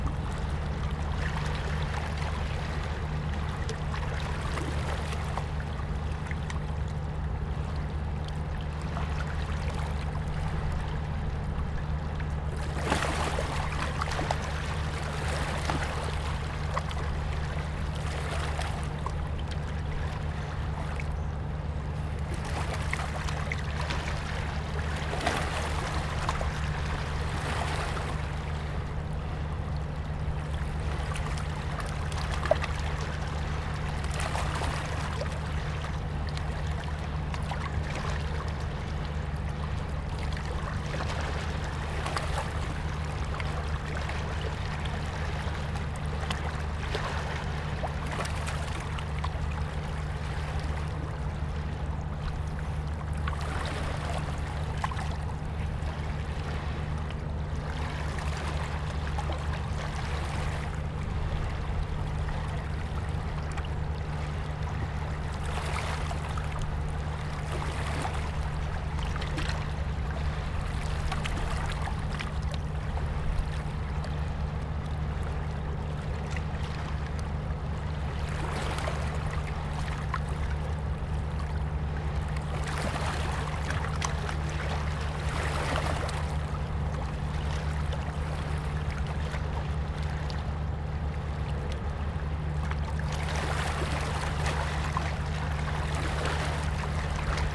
Langel, Köln, Deutschland - Rheinufer / Bank of the Rhine
Wellen plätschern, im Hintergrund brummt ein kleines Schiff. / Waves rippling. In the background hums a little ship.